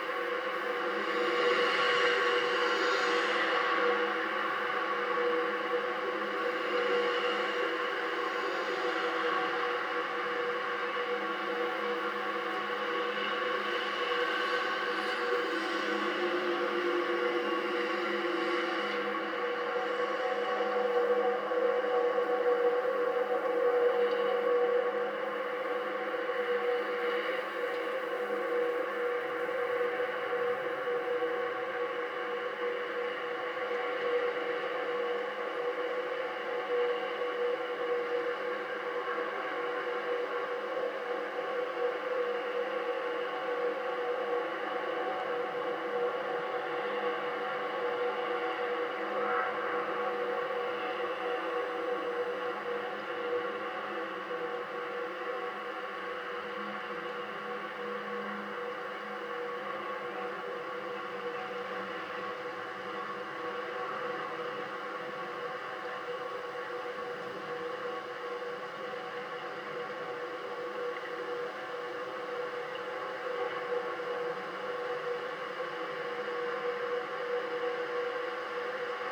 West Loop, Chicago, IL, USA - sign in union park
Two contact mics connected to sign in union park